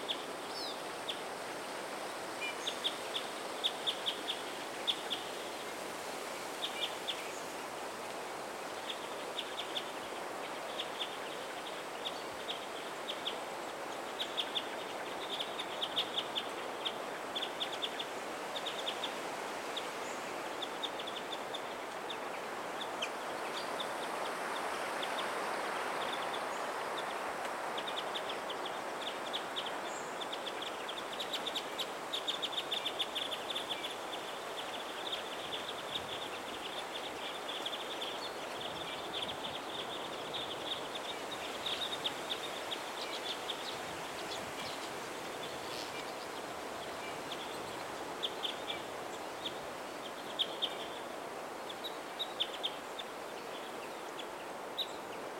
Gotska Sandön, Sweden - Warblers on Electricity Wires
A set of recordings made in one autumn morning during a work stay in the northwest coast of the uninhabited island of Gotska Sandön, to the east of Gotland, Sweden. Recorded with a Sanken CSS-5, Sound devices 442 + Zoom H4n.
Most of the tracks are raw with slight level and EQ corrective adjustments, while a few others have extra little processing.
Fårösund, Sweden, 20 October 2016